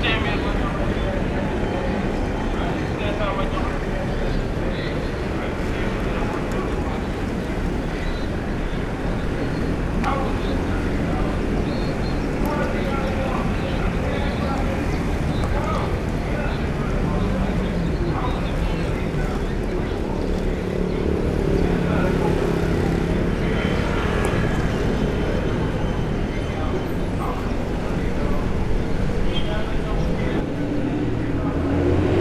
Allees Khalifa Ababacar Sy, Dakar, Senegal - jet d’eau sicap
The roundabout “jet d’eau sicap” and its surrounding buildings is part of a urbanisation projects from the end of 1950’s.
Sicap is part of the name of a number of districts in Dakar that were planned and built by the Société immobilière du Cap-Vert (SICAP).
22 February 2020, 12:31